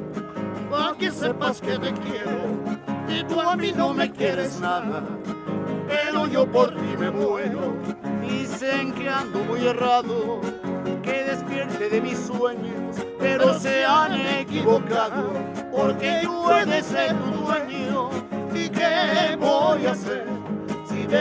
Portal Guerrero, Centro, San Andrés Cholula, Pue., Mexique - Cholula El Zocalo - José, Luis & Rey
Cholula
El Zocalo.
Quelques minutes avec José, Luis & Rey - Musiciens
Puebla, México, 12 November 2021, 12:10